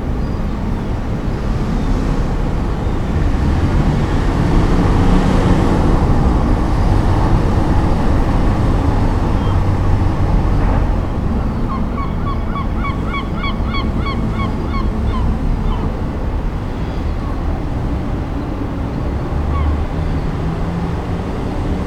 open window at night, Hotel Baranca, Porto, Portugal - open window at night Hotel Baranca
Oporto, Portugal, 24 July